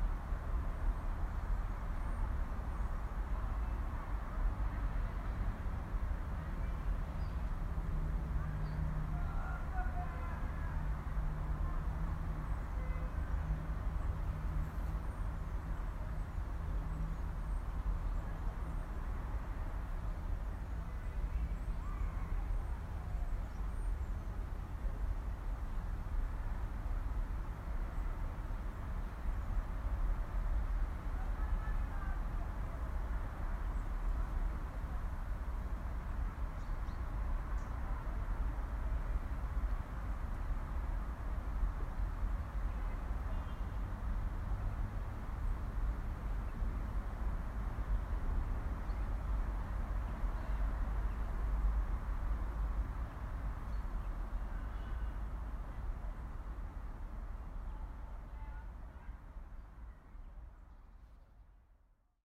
Gravias, Ag. Paraskevi, Greece - Deree Campus, outside of Chapel
Recording of campus for course project. Sound is unedited except for fade in and fade out.